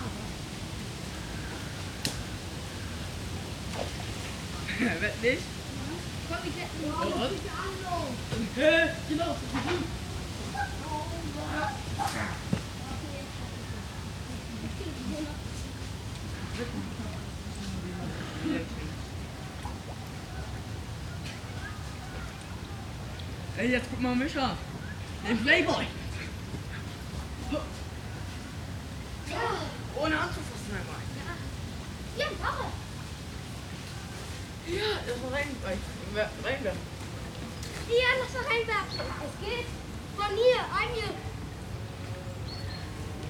Panke, Wedding, Berlin, Deutschland - Panke, Berlin - at Panke waterside (small stream), children, crows
Panke, Berlin - at Panke waterside (small stream), children, crows.
[I used the Hi-MD-recorder Sony MZ-NH900 with external microphone Beyerdynamic MCE 82]